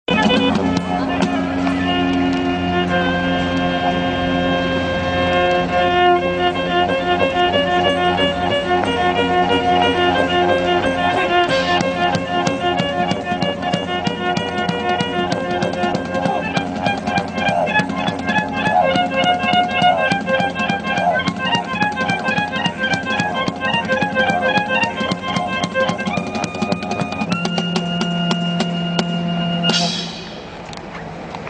Street band, los monkys, playing on the Maggiore square in Bologna
Bologna, Italy, 15 October 2007